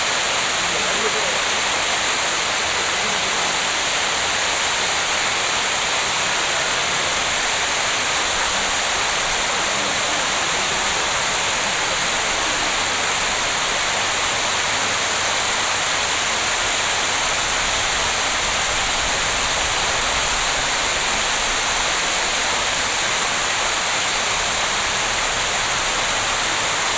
stuttgart, königstr, fountain
a modern fountain in the shopping zone - spraying water rings
soundmap d - social ambiences and topographic field recordings
Stuttgart, Germany, 19 June 2010